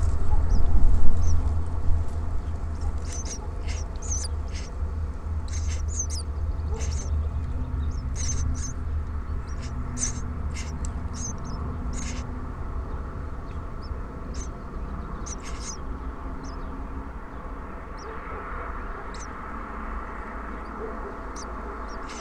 Chania 731 00, Crete, birds nest in wall
there are several holes with birds nests in a concrete wall of a tunnel...